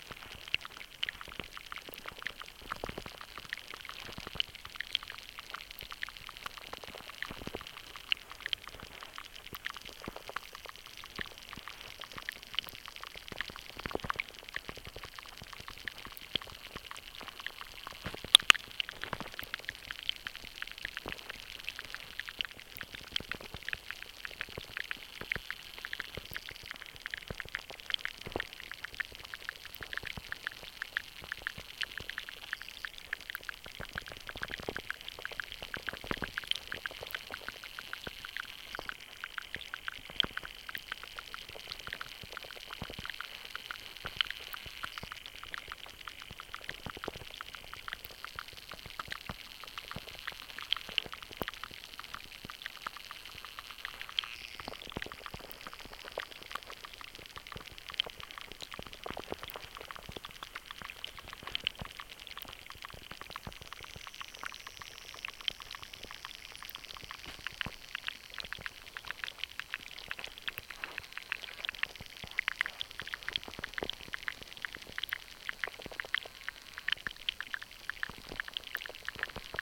underwater life, perruel
homemade hydrophones in a small shallow stream leading to the andelle river in the village of perruel, haute normandie, france
Eure, Haute-Normandie, France métropolitaine